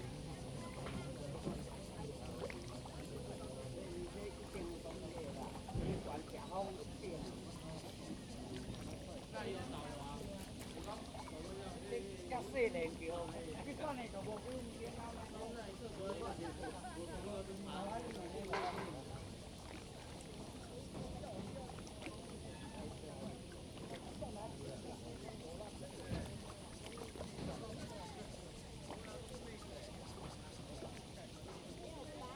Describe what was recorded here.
Very Hot weather, Yacht, Lake voice, Tourists, Zoom H2n MS+XY